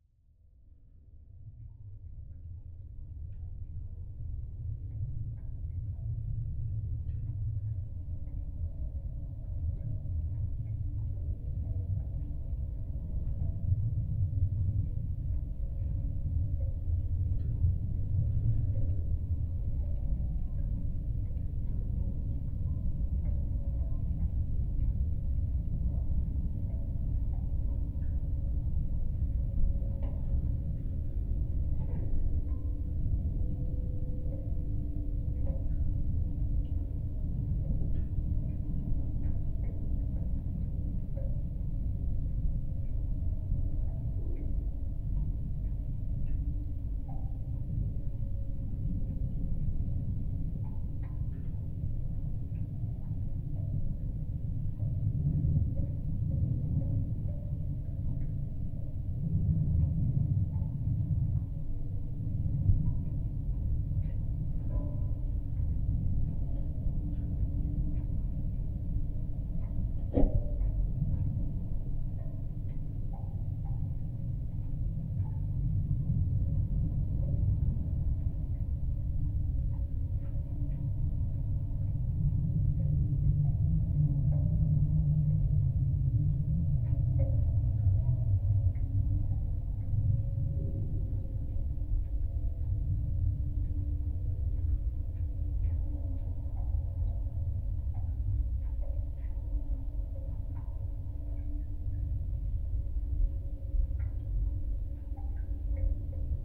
contact microphones recording drone on the metallic parts of the footbridge
10 August, 08:50